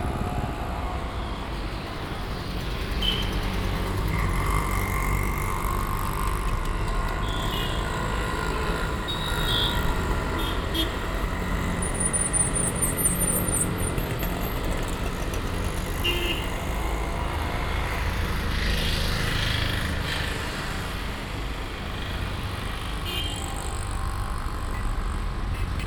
bangalor, karnataka, 24th main street
another recording at the same postion - this time on a monday morning ;-)
international city scapes - social ambiences and topographic field recordings